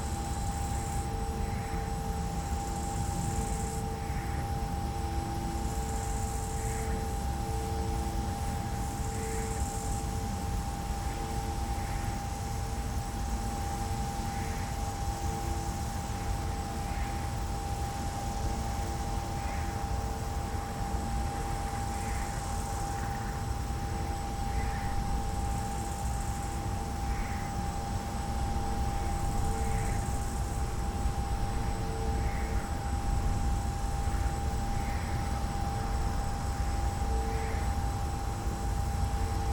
wind turbine Donau Insel, Vienna
silent wind turbine with crickets